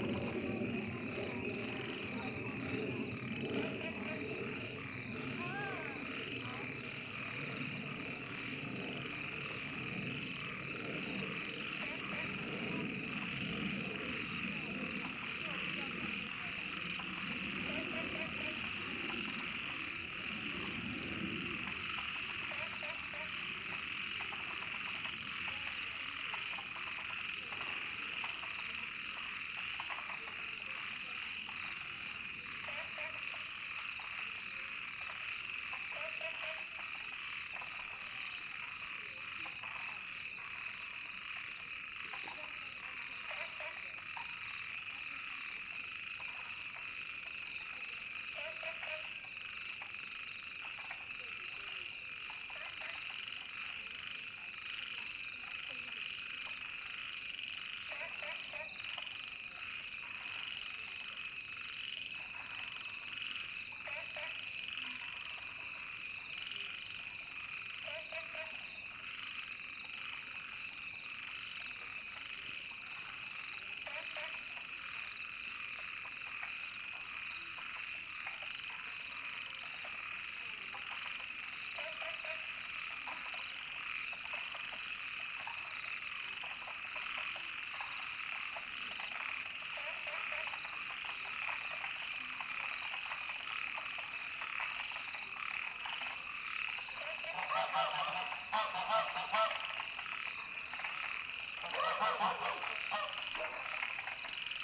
江山樂活, 埔里鎮桃米里 - frog and Aircraft
All kinds of frog sounds, Aircraft flying through
Zoom H2n MS+XY
Puli Township, 華龍巷164號